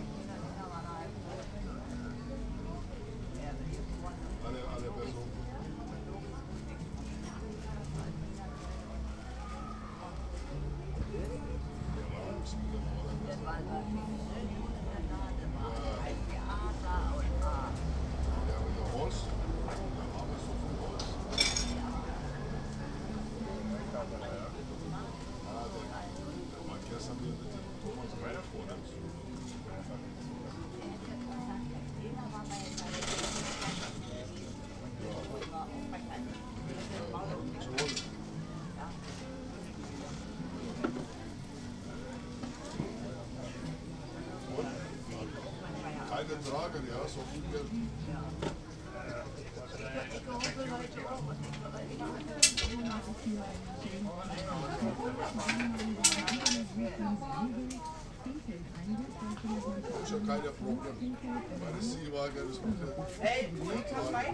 venders and visitors of the flea market at a snack stall talking about family problems, haggling etc.
the city, the country & me: august 22, 2010